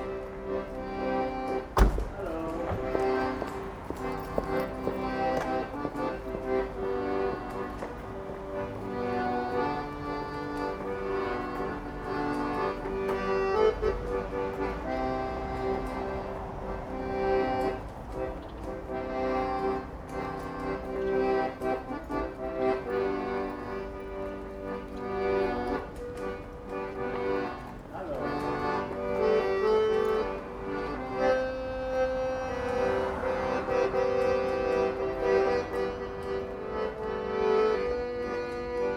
Accordionist outside the Arminius Halle
Often playing beside the entrance to the market hall and saying hello as you pass by.
Berlin, Germany, September 2011